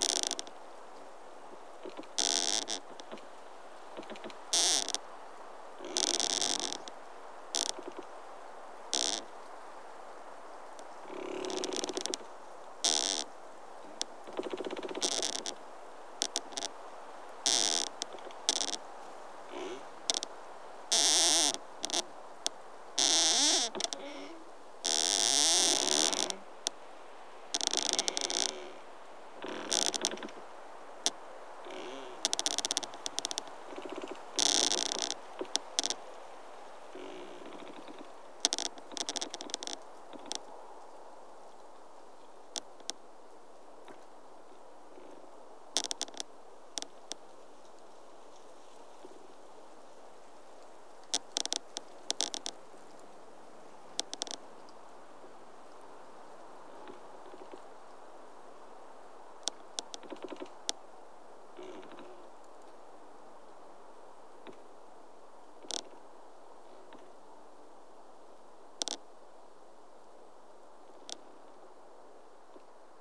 trees rubbing to each other in a wind. close up recording
Lithuania, March 2018